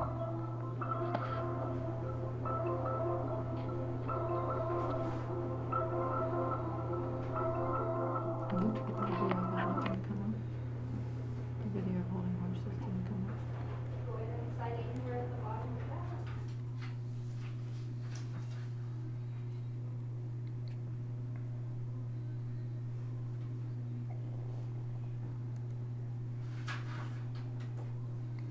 lots of office biz talk eaves drop